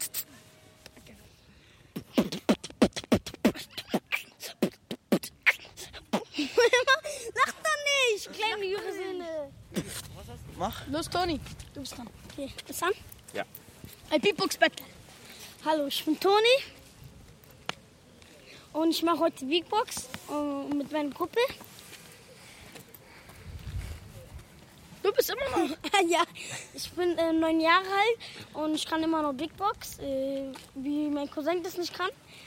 {"title": "Soldiner Kiez, Wedding, Berlin, Deutschland - Wollankstraße 57A-D, Berlin - Beatbox battle by Toni and Roberto", "date": "2012-10-13 13:42:00", "description": "Wollankstraße 57A-D, Berlin - Beatbox battle by Toni and Roberto.\nIn the course of recording I was interrupted by Roberto, Toni and Tyson, three yound teenagers from the neigbourhood. Two of them turned out to be astonishingly skilled beat box artists who immediately engaged in a 'beatbox battle'.\n[I used the Hi-MD-recorder Sony MZ-NH900 with external microphone Beyerdynamic MCE 82]", "latitude": "52.56", "longitude": "13.39", "altitude": "44", "timezone": "Europe/Berlin"}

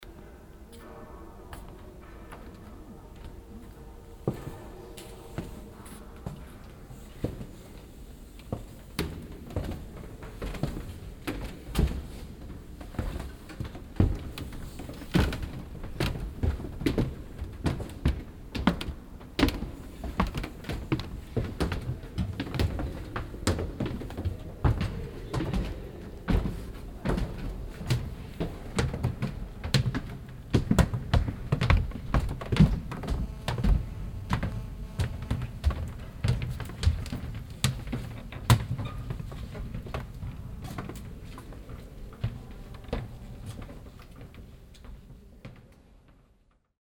{"title": "essen, gelände zeche zollverein, in kabakov installation", "date": "2008-11-13 14:46:00", "description": "im ehemaligen Salzlager auf dem Gelände des Weltkulturerbes Zeche Zollverein. Besuchergruppe und Schritte in der Installation \"der Palast der Projekte\" von Ilya & Emilia Kabakov\nProjekt - Stadtklang//: Hörorte - topographic field recordings and social ambiences", "latitude": "51.49", "longitude": "7.03", "altitude": "51", "timezone": "Europe/Berlin"}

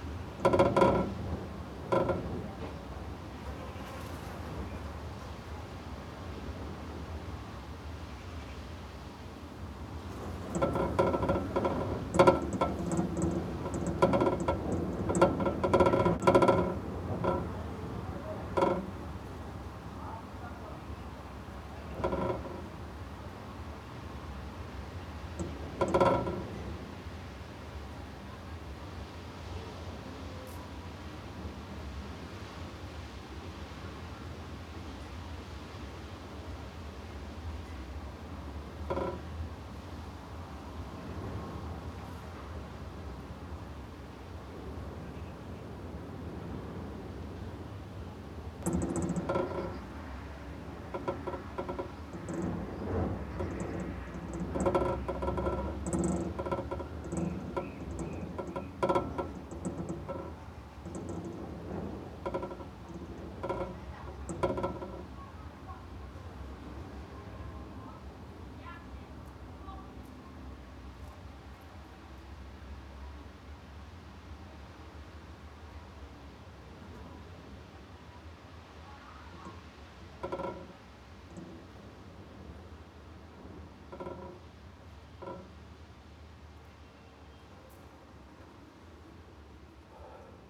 {"title": "Vobkent, Uzbekistan, wind rattling a window - wind rattling a window", "date": "2009-08-18 12:30:00", "description": "recorded in the shrine of Khwaja `Ali ar-Ramitani while in the background locals prepare for the weekly market", "latitude": "40.06", "longitude": "64.49", "timezone": "Asia/Samarkand"}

